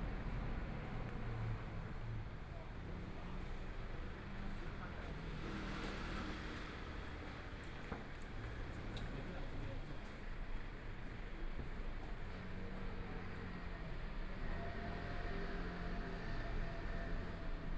Nong'an St., Taipei City - walking In the Street
walking In the Street, Traffic Sound, Motorcycle Sound, Clammy cloudy, Binaural recordings, Zoom H4n+ Soundman OKM II